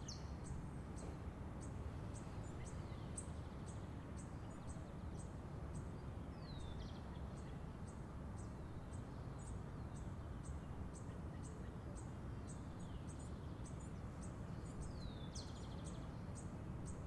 Fontibón, Bogotá, Colombia - BIrds early morning